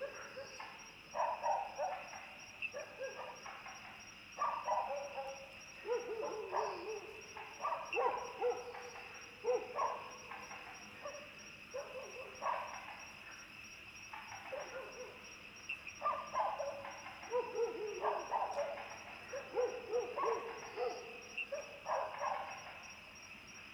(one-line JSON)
{"title": "三角崙, Yuchi Township, Nantou County - Frogs chirping and Dogs barking", "date": "2016-04-19 18:44:00", "description": "Frogs chirping, Sound of insects, Dogs barking\nZoom H2n MS+XY", "latitude": "23.93", "longitude": "120.90", "altitude": "767", "timezone": "Asia/Taipei"}